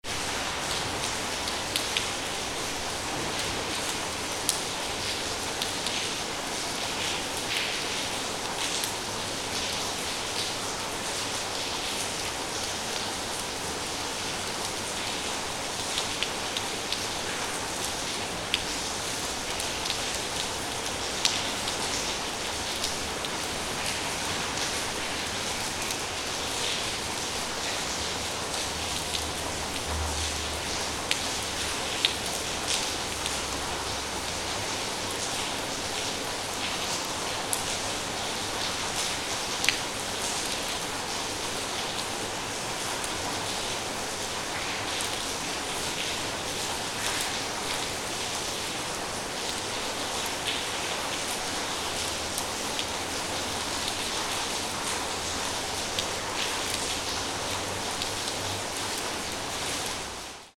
Krnjevo 900, Rijeka, rain in building corridor
Rain, building corridor @ Krnjevo 900 complex (of neo futuristic buildings:-)
recording setup: M/S (Sony stereo condenser via Sony MD @ 44100KHz 16Bit
18 November 2002, 20:40